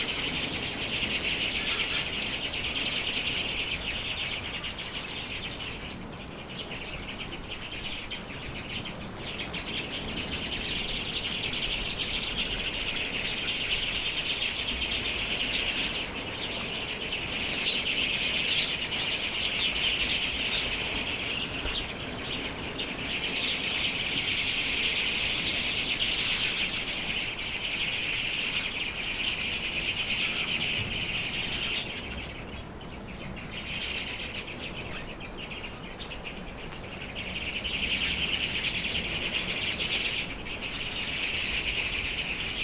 stromboli, ginostra - lots of birds in a tree
early evening, lot of birds in a tree